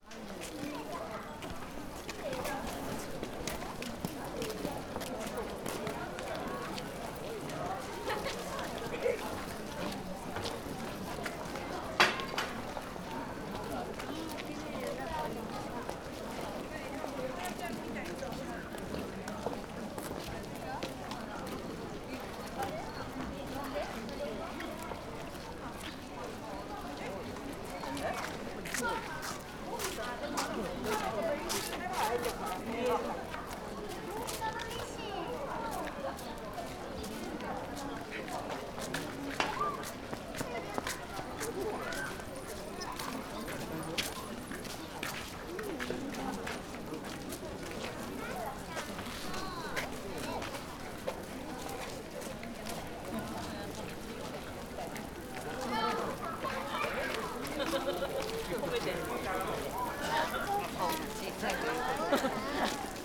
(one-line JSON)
{"title": "Osaka, Osakajo, Osaka Castle - west entrance", "date": "2013-03-30 18:06:00", "description": "a swarm of tourists walking in both directions. just entering but seems like a busy place, visited frequently, jiggling with people. recording reverberate by a gate passage nearby.", "latitude": "34.69", "longitude": "135.52", "altitude": "31", "timezone": "Asia/Tokyo"}